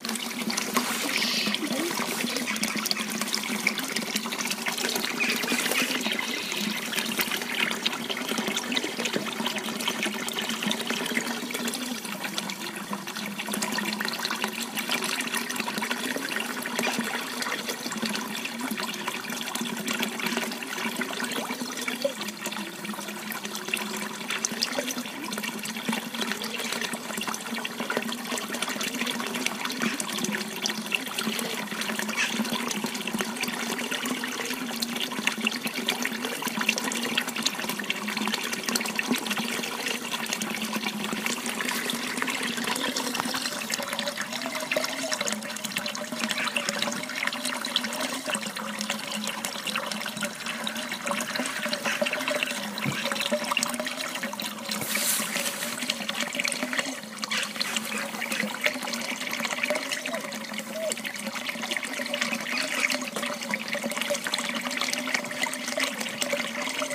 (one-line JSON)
{"title": "St Augustine's Well, Cerne Abbas, Dorset - Water flowing from the well basin.", "date": "2021-12-26 14:15:00", "description": "The sound of the well on St Stephans day 2021. Water flows from the basin and is channeled into a stream. In the past a chapel was built over the well which is a spring located below giants hill.", "latitude": "50.81", "longitude": "-2.47", "altitude": "127", "timezone": "Europe/London"}